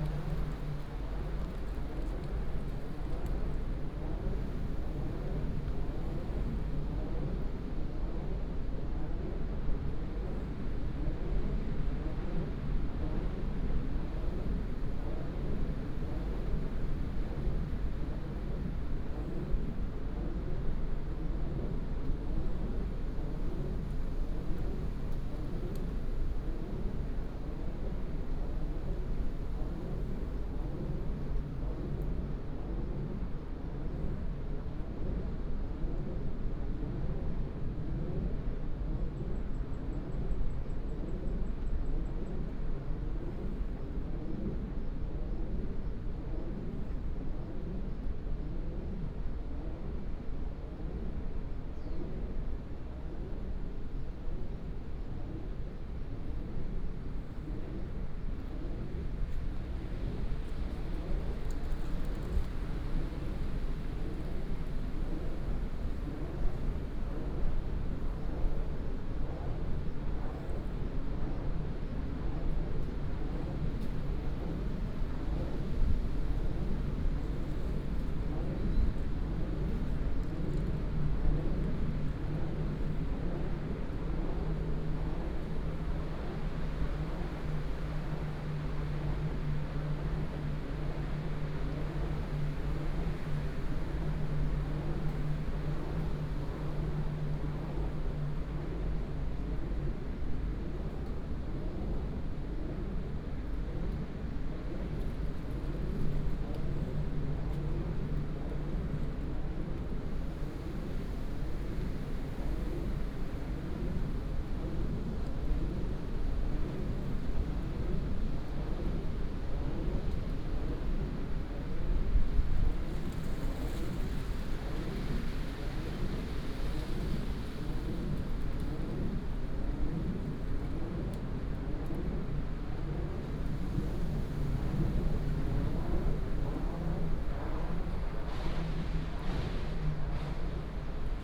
Zhunan Township, Miaoli County - Wind Turbines
wind, forest, Wind Turbines, Binaural recordings, Sony PCM D100+ Soundman OKM II